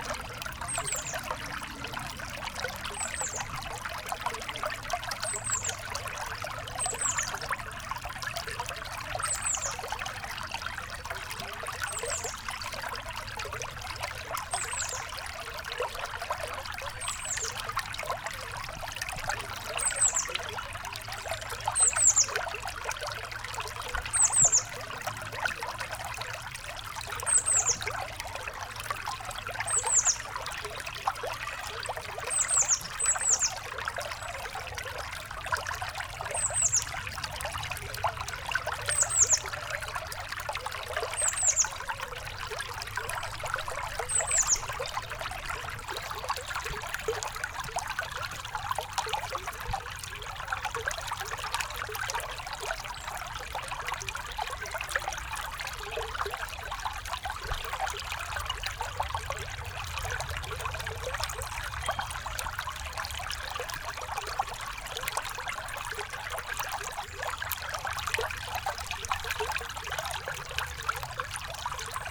Walhain, Belgique - The river Orne
Recording of the river Orne, in a pastoral scenery. The Sart stream and the camping d'Alvaux ambience.
Recorded with Audioatalia microphones in front of the water.
Walhain, Belgium, 10 April 2016, 16:30